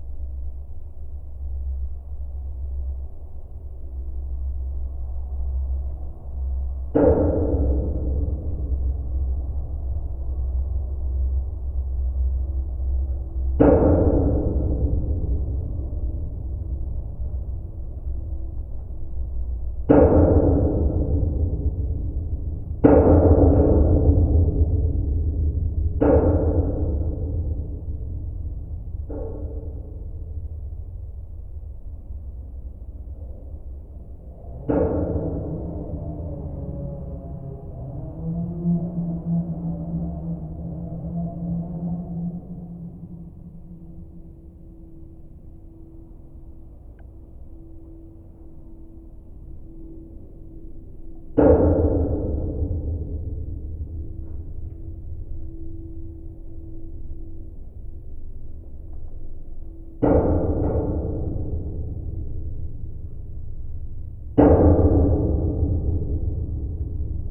Kiulupys, Lithuania, metallic watertower
Just another abandoned metallic watertower in my sound collection. Wind moves some element of stairs...
9 August 2020, ~12:00